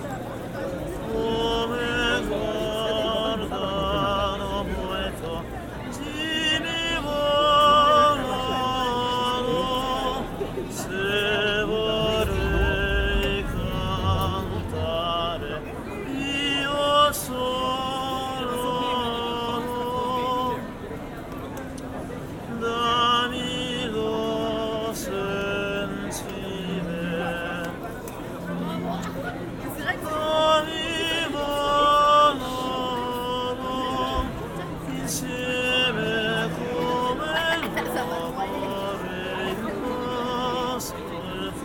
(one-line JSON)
{"title": "Pl. de la Cathédrale, Strasbourg, Frankreich - blind singer in front of the cathedral", "date": "2022-08-19 22:44:00", "description": "in the evening, many tourists and people, a blind singer collects money.(ambeo headset)", "latitude": "48.58", "longitude": "7.75", "altitude": "154", "timezone": "Europe/Paris"}